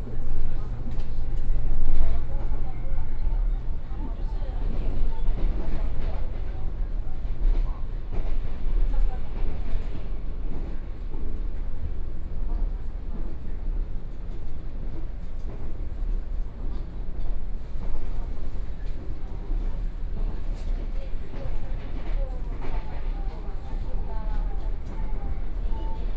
Jungli City, Taoyuan County - The Taiwan Railway
Commuter rail, Sony PCM D50 + Soundman OKM II